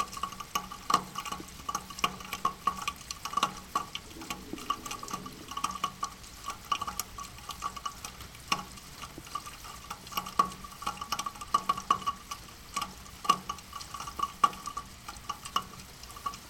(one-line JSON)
{"title": "backyard - backyard, rain drops in eaves gutter", "date": "2008-08-10 17:00:00", "description": "quiet sunday, it's raining, rain drops falling down the eaves gutter, playing the sound of this afternoon. 10.08.2008 17:00", "latitude": "52.49", "longitude": "13.42", "altitude": "45", "timezone": "Europe/Berlin"}